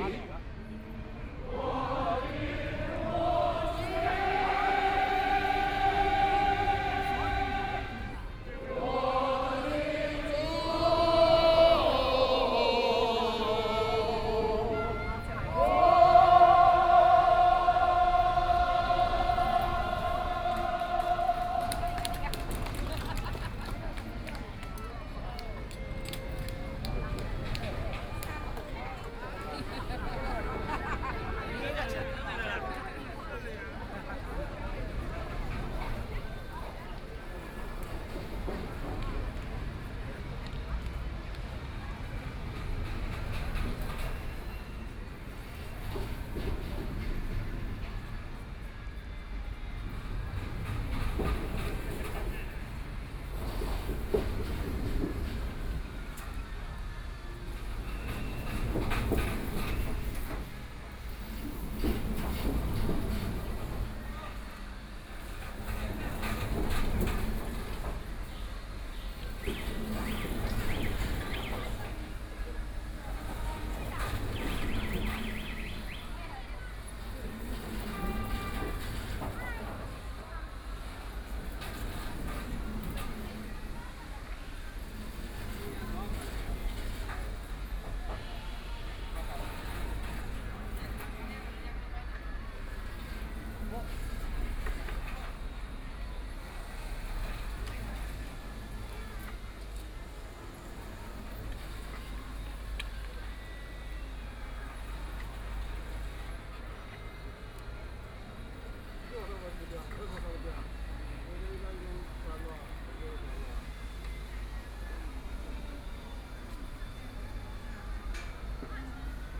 Lu Xun Park, Shanghai - Walk in the park
Many people chorus together, Amusement mechanical sound, Binaural recording, Zoom H6+ Soundman OKM II
23 November, 11:53